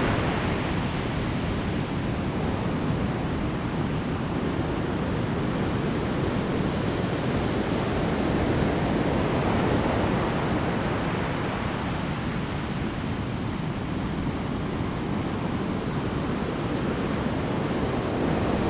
at the beach, Sound of the waves
Zoom H2n MS+XY
Pingtung County, Taiwan, 2 April 2018